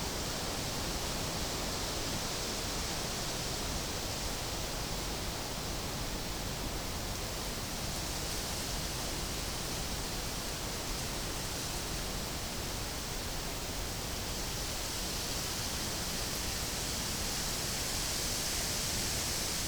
berlin wall of sound-s.e. of the eiskeller. j.dickens160909
Berlin, Germany